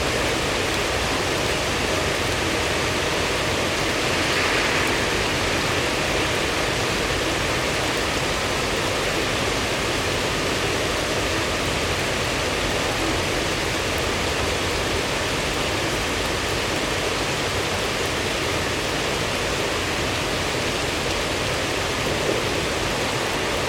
Rain-dry transition. In this recording, you can listen to a few cars and airplanes passing by and a couple of thunders. When the rain stops, someone starts to sweep the floor of their backyard.
Recorded with parabolic mic Dodotronic.